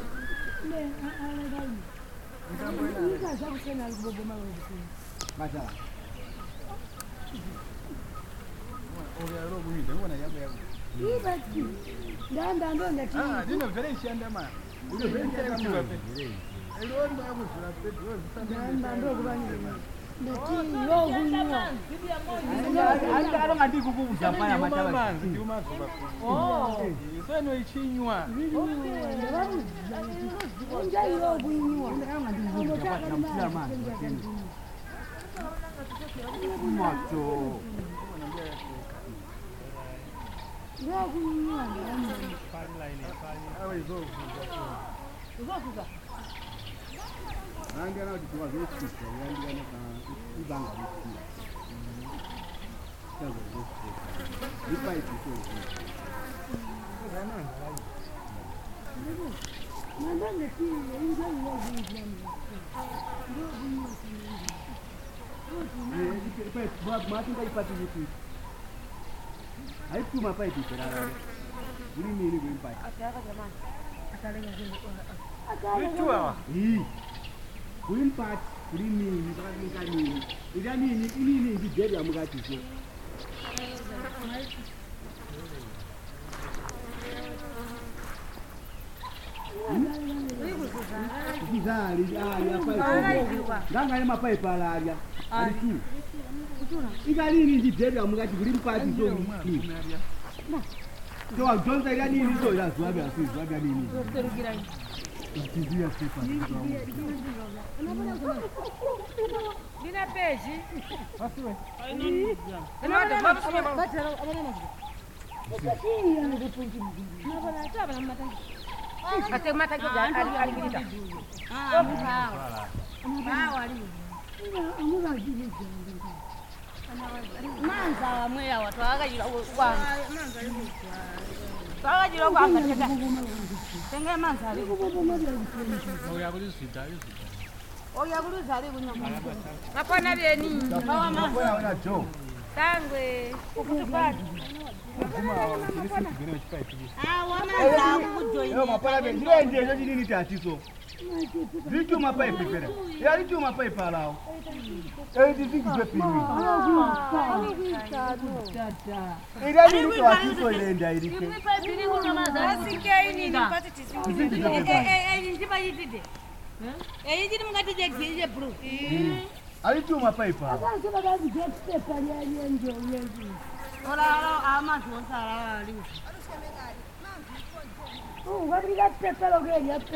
Sebungwe River Mouth, Binga, Zimbabwe - Near the fishpond of the Tuligwasey Women
Ambience at our arrival near the new fishpond build by the Tuligwazye Women's Group. Zubo Trust has been supporting the women in this new project.
24 May 2016